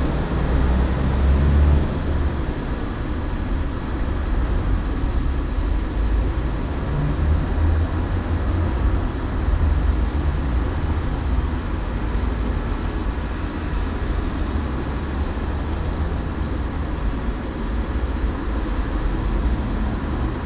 Dešne breg, Maribor, Slovenia - maribor2012 landmark: cona f
June 2012